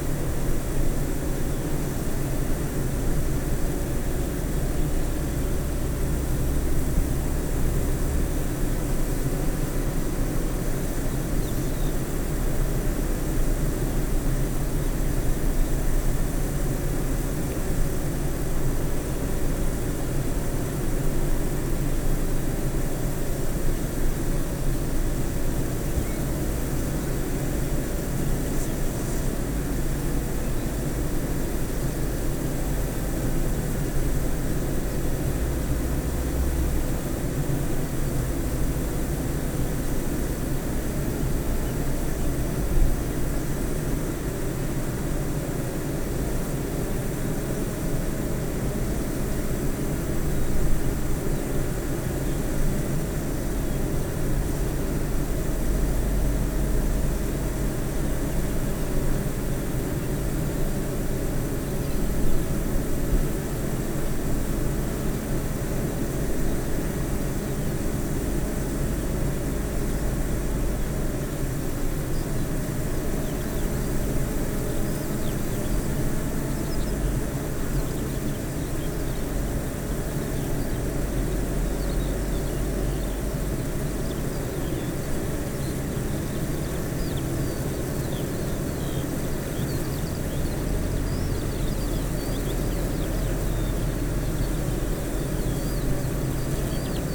Green Ln, Malton, UK - bee hives ...
bee hives ... eight bee hives in pairs ... dpa 4060s to Zoom F6 clipped to a bag ... bird call song ... skylark ... corn bunting ...
Yorkshire and the Humber, England, United Kingdom, 25 June